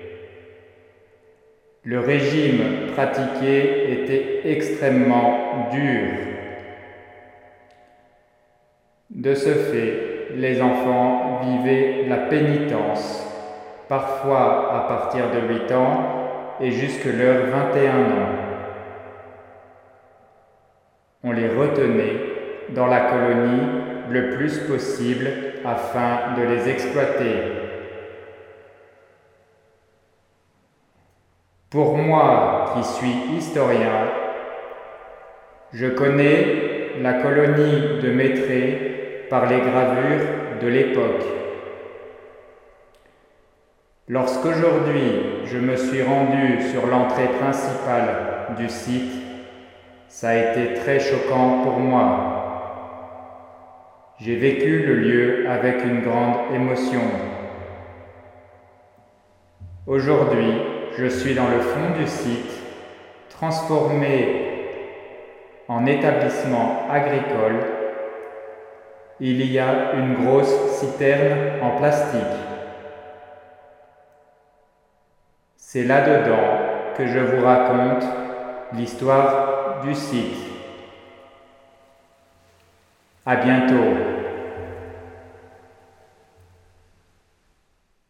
Mettray, France - Cistern
Into a huge plastic cistern, I explain slowly the place history. As I especially like cistern and objetcs like that, I often speak into, in aim to play with the reverb effect. I'm absolutely not use with the plastic object, in fact here it's an agricultural fiberglass object. This one has a special tube and metal feeling. As it's quite sharp, it's not necessarily the one I prefer.